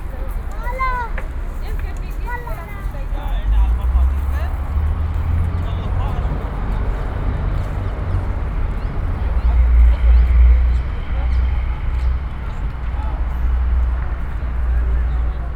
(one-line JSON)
{"title": "Brussels, Square Ambiorix", "date": "2012-01-17 16:27:00", "description": "Children playing, busses all around\nPCM-M10, SP-TFB-2, binaural.", "latitude": "50.85", "longitude": "4.38", "altitude": "61", "timezone": "Europe/Brussels"}